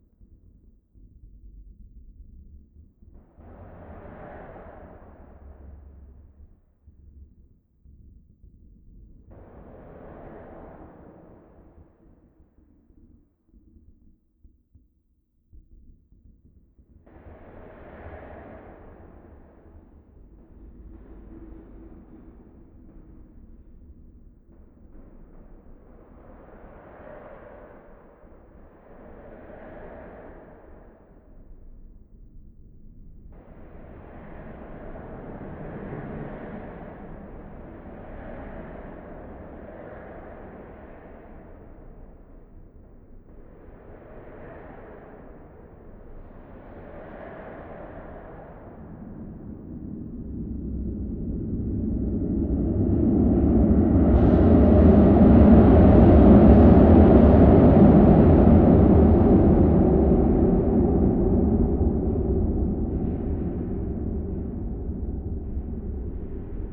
Altstadt, Düsseldorf, Deutschland - Düsseldorf, Bridge Oberkassel, bridge abutment
Inside the bridge abutment of the Oberkasseler bridge. The sounds of car traffic and trams passing by and reverbing in the inner bridge hall.
This recording is part of the exhibition project - sonic states
soundmap nrw - topographic field recordings, social ambiences and art places